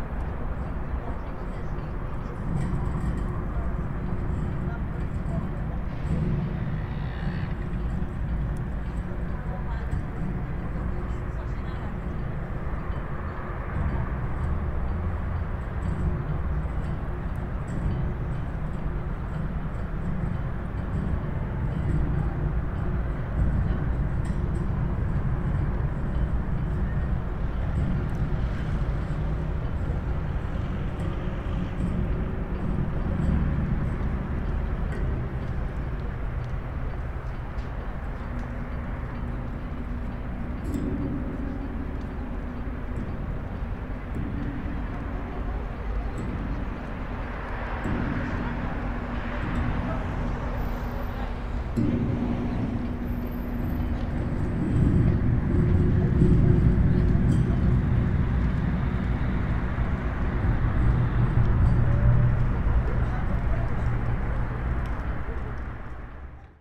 playing the fence to local village sounds in Skoki